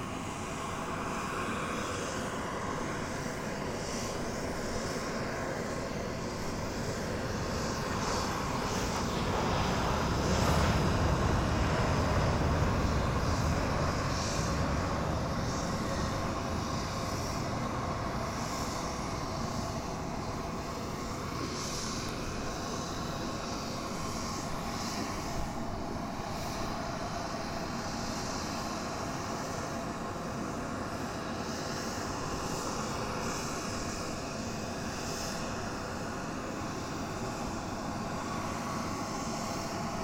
Madeira, airport - observation deck
small jet plane idling on the runaway, making so much noise with its jet engines it basically drowns all other surrounding sounds. passengers on the observation deck are not able to hear the announcements. a TAP flight will land any minute and there is a lot of commotion on the airfield getting ready for handling the incoming flight. The plane lands at some point but still the small jet plane is louder. You can hear the difference after it takes off around 5th minute of the recording.